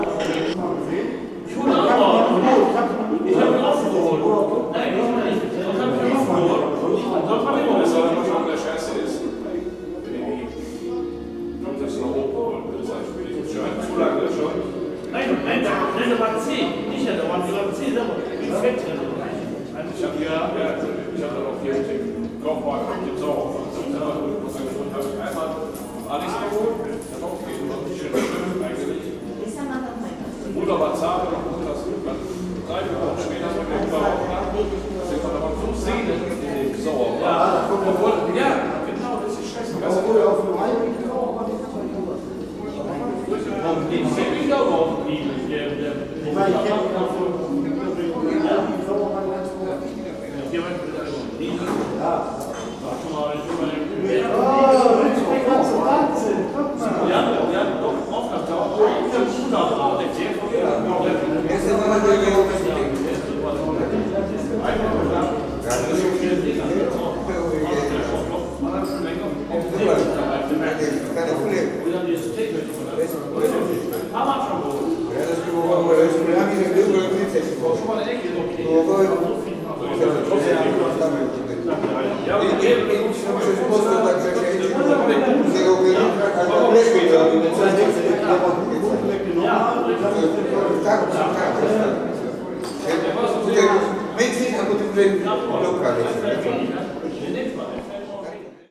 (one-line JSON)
{"title": "Wuppertal-Ronsdorf, Deutschland - Rail Station", "date": "2012-07-26 18:15:00", "description": "»Rail Station« am Bahnhof Wuppertal-Ronsdorf", "latitude": "51.23", "longitude": "7.22", "altitude": "298", "timezone": "Europe/Berlin"}